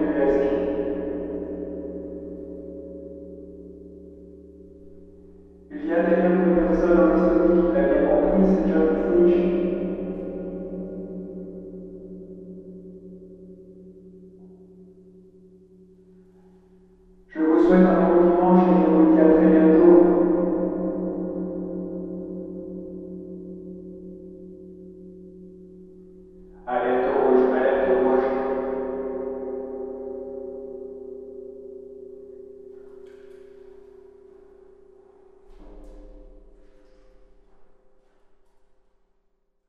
It's often interesting to speak in the tubes or the cisterns. Resonance are huge, even more if it's empty. In the abandoned mines, near everything is derelicted, so it's a great playing field. Nothing to say is not a good thing in fact ; you have to say something, even if it's whatever. In first I speak stupid things on a hole on the cistern summit. After, I say other stupids words in a long tube going threw the cistern. I was not sure it was really connected and it was 2 meters high. I had to climb and to do a traction force with arms to speak... It would be really interesting to speak some intelligent things in a place like that.
Differdange, Luxembourg, 16 April 2017, ~11:00